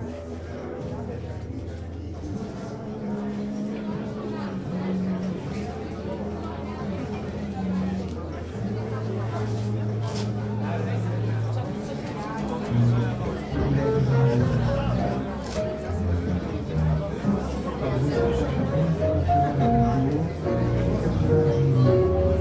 {"title": "A-Takt Open 16.02.08 - A-Takt Opening 16.02.08", "description": "a new gallery, A-Takt, in Neukölln / Reuterkiez. funny entrance into the basement. one has to move somehow through a treasure box, climbing a narrow ladder downstairs. cool & friendly place. some sounds and voices from the opening", "latitude": "52.49", "longitude": "13.43", "altitude": "46", "timezone": "GMT+1"}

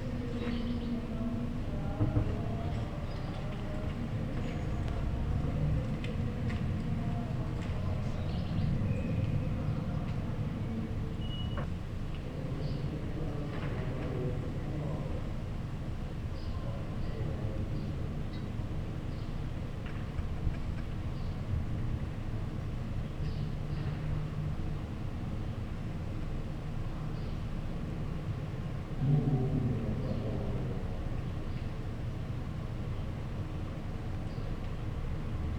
{"title": "Am Nordbahnhof, Berlin, Germany - sonnabendnachmittag (mit ver.di-demo)", "date": "2015-04-25 16:12:00", "description": "hinterhof, eine baustelle, wind, in der ferne eine demo\na courtyard, a construction site, wind, a union's demonstration in the far", "latitude": "52.53", "longitude": "13.38", "altitude": "37", "timezone": "Europe/Berlin"}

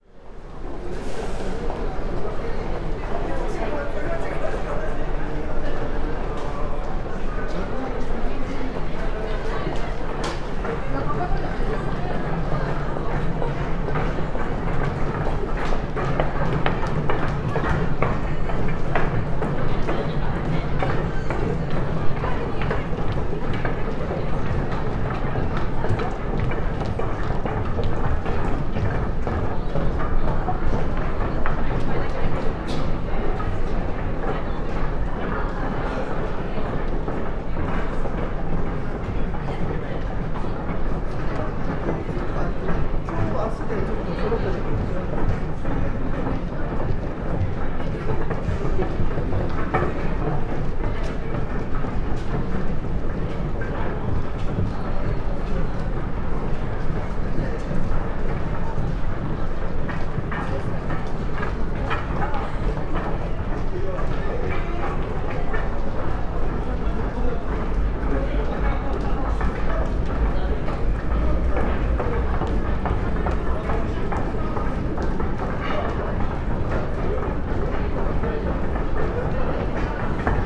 {"title": "shibuya metro station, tokyo - steps flow", "date": "2013-11-18 18:23:00", "description": "walkers and their musical steps", "latitude": "35.66", "longitude": "139.70", "altitude": "39", "timezone": "Asia/Tokyo"}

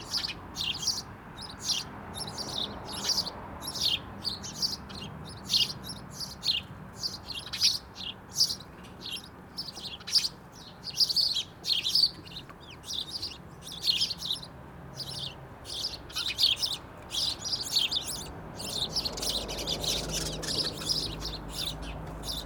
{"title": "Reading, UK - Twelve Sparrow Nestboxes", "date": "2017-05-02 10:32:00", "description": "Your common or garden House Sparrows are now having a bit of a hard time in the UK as so many people have decked their gardens, or generally 'tidied-up' too much, thus depriving these loveable little birds of not only their natural food sources but a lovely bit of bare ground to have a dust bath in, to get rid of all those pesky parasites. I have put up 12 nest boxes on the back of my house, either side of a second storey sash window and at the moment 11 are occupied. This recording is made using two lavalier mics (Sony 77bs) into a Sony M10, placed either side of the exterior windowsill, this is not ideal as there is a 'gap' in the stereo image as you will hear, ...... I also have very understanding neighbours!", "latitude": "51.45", "longitude": "-0.97", "altitude": "40", "timezone": "Europe/London"}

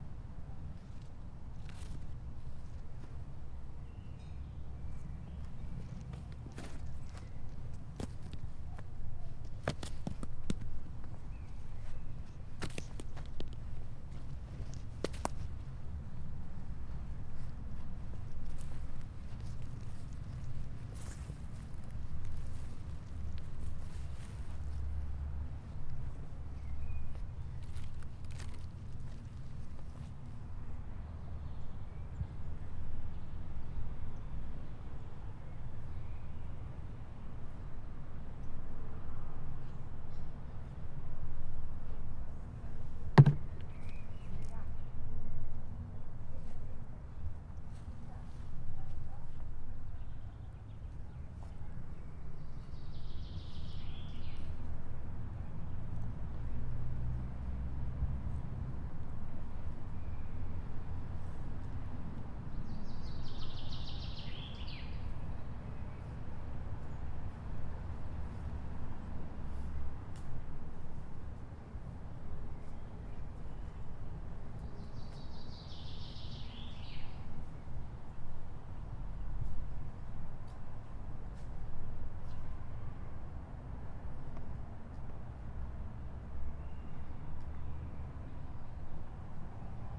{"title": "Kidričeva ulica, Nova Gorica, Slovenija - Naključen posnetek ob bloku na Kidričevi", "date": "2017-06-07 11:07:00", "description": "Kicking a cone.\nRecorded with H5n + AKG C568 B", "latitude": "45.95", "longitude": "13.65", "altitude": "107", "timezone": "Europe/Ljubljana"}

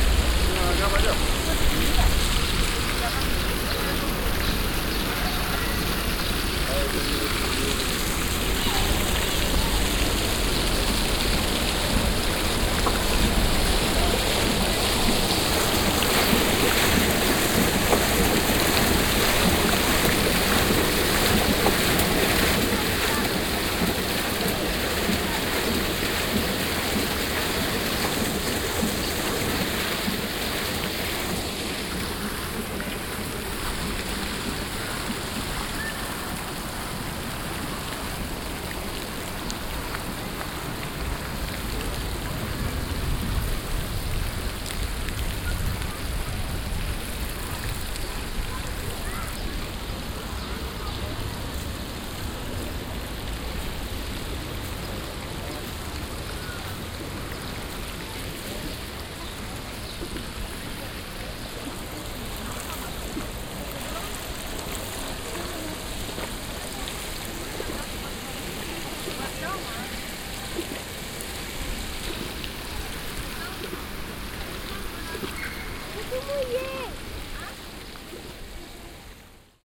{"title": "paris, rue brisemiche, jean tinguely fountain", "date": "2009-07-02 14:40:00", "description": "art fountain with moving objects by swiss machine artist jean tinguely\ninternational soundmap : social ambiences/ listen to the people in & outdoor topographic field recordings", "latitude": "48.86", "longitude": "2.35", "altitude": "50", "timezone": "Europe/Berlin"}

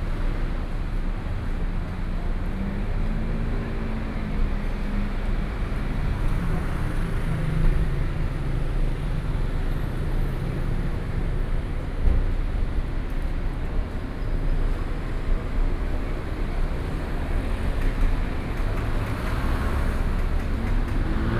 {"title": "Taoyuan, Taiwan - Place the morning", "date": "2013-09-11 07:30:00", "description": "Square in front of the station, Sony PCM D50 + Soundman OKM II", "latitude": "24.99", "longitude": "121.31", "altitude": "102", "timezone": "Asia/Taipei"}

